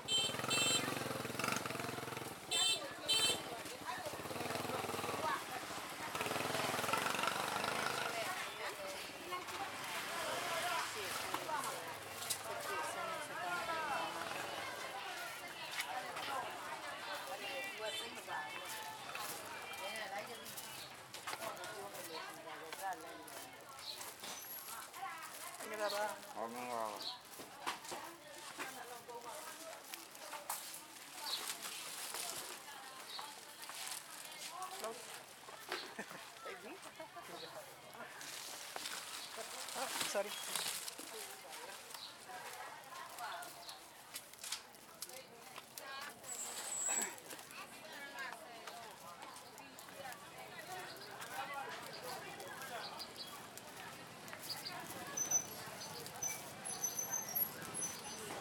market. mandalay. 27th street.